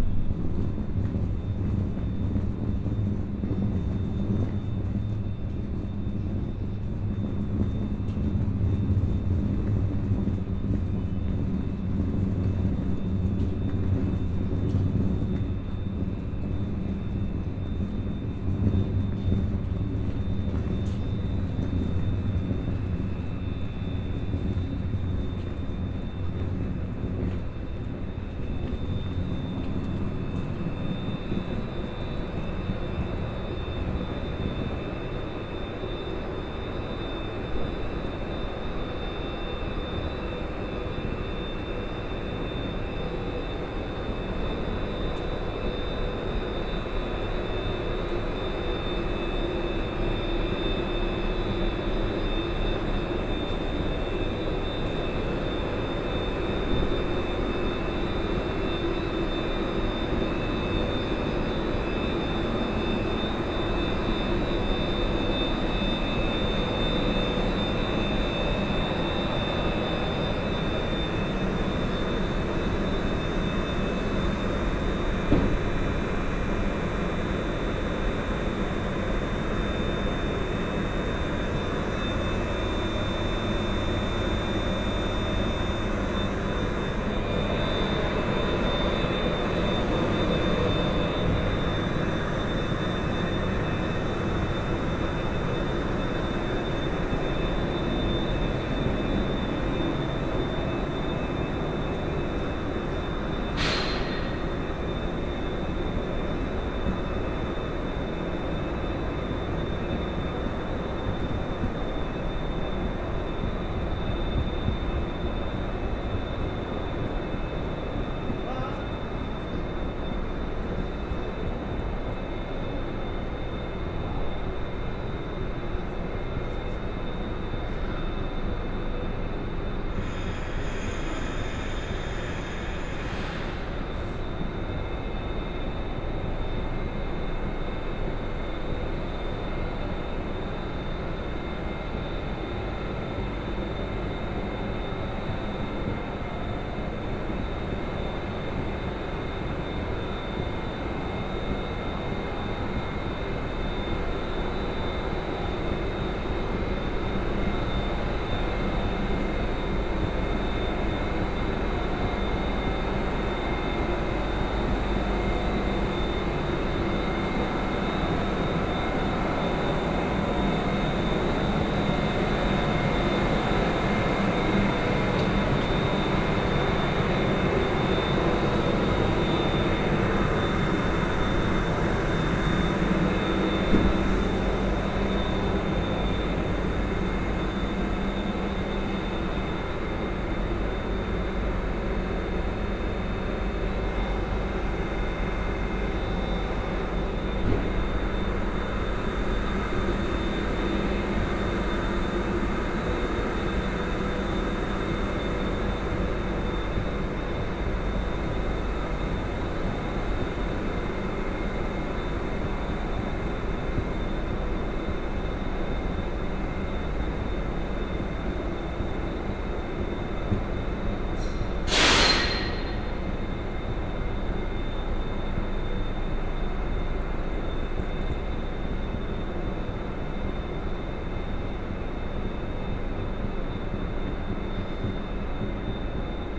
Warsaw, Okecie airport - new areas of the airport
(binaural) exploring new areas of the Okecie airport that I haven't seen yet. long corridors with escalators, train platform with an idling train, exit towards bus station, elevator, empty waiting rooms, observation deck. (sony d50 + luhd PM-01 bin's)
Warszawa, Poland, 5 November 2015, 09:37